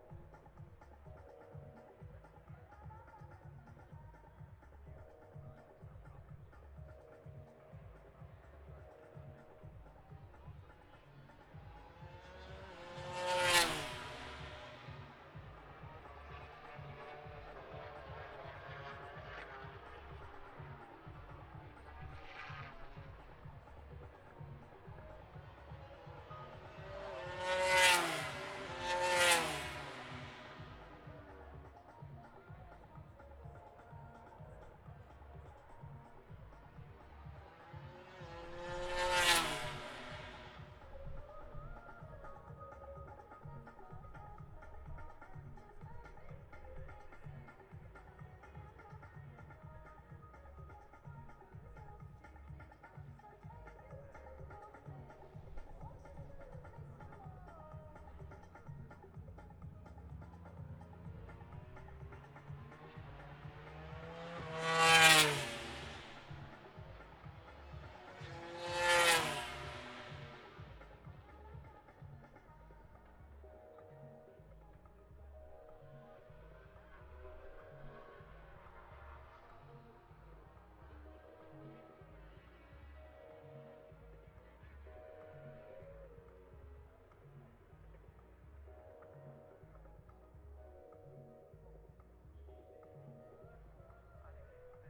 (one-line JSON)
{"title": "Towcester, UK - british motorcycle grand prix 2022 ... motogarnd prix ...", "date": "2022-08-06 09:55:00", "description": "british motorcycle grand prix 2022 ... moto grand prix free practice three ... zoom h4n pro integral mics ... on mini tripod ... plus disco ...", "latitude": "52.08", "longitude": "-1.02", "altitude": "158", "timezone": "Europe/London"}